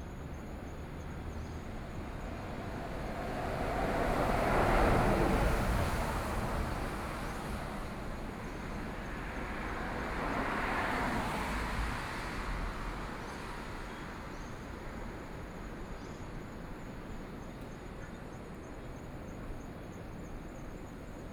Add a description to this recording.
At the roadside, Traffic Sound, Sound of the waves, The sound of a train traveling through, Very hot weather, Frogs sound, Birdsong, Under the tree, Sony PCM D50+ Soundman OKM II